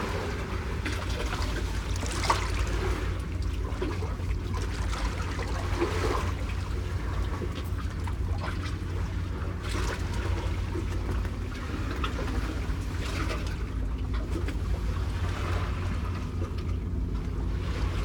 Staten Island
waves and boats. parabolic microphone